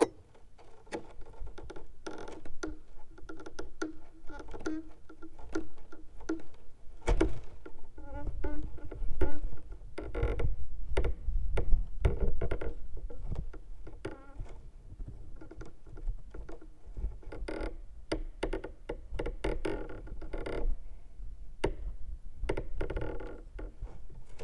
Saving boat on ice, contact mics
Laak, The Netherlands, 6 February 2012